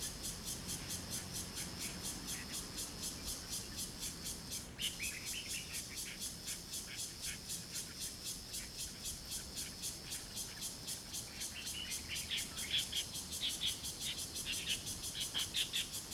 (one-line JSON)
{"title": "三和海濱公園, Taimali Township - in the Park", "date": "2014-09-05 08:45:00", "description": "Birdsong, Cicadas sound, Traffic Sound, Very hot weather\nZoom H2n MS+XY", "latitude": "22.67", "longitude": "121.04", "altitude": "15", "timezone": "Asia/Taipei"}